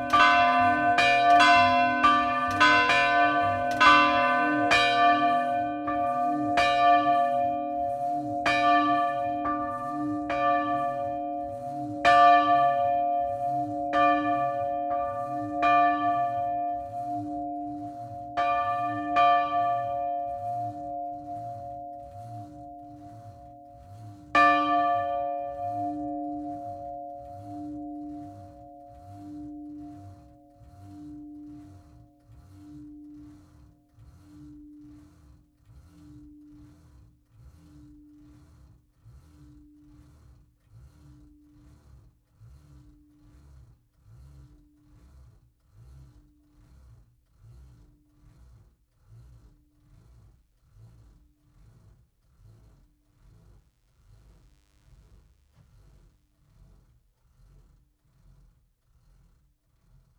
Rue de l'Église, Fontaine-Simon, France - Fontaine Simon- Église Notre Dame
Fontaine Simon (Eure et Loir)
Église Notre Dame
Tutti Mix